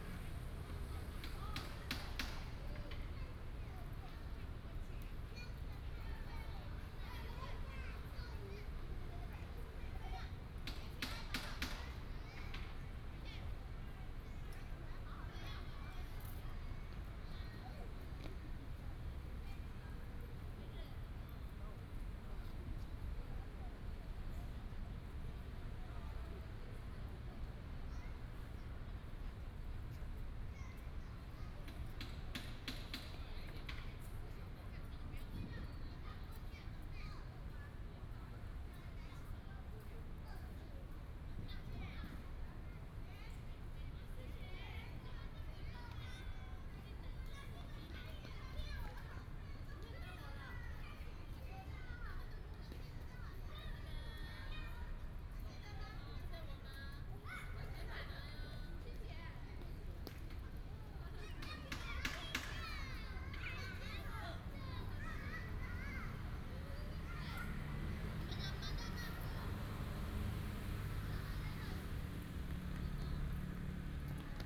古莊公園, Taipei City - in the Park
in the Park, Child, Construction sound